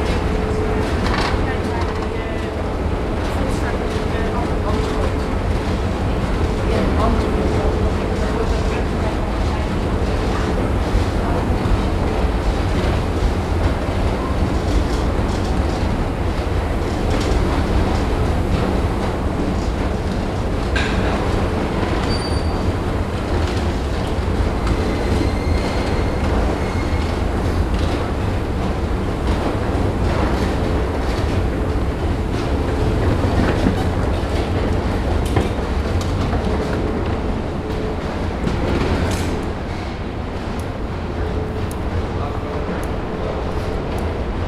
Sint-Annatunnel, Antwerpen, Belgium - Van oever naar oever langs onder.
recorded and created by Benjamin Vinck with a Tascam recorder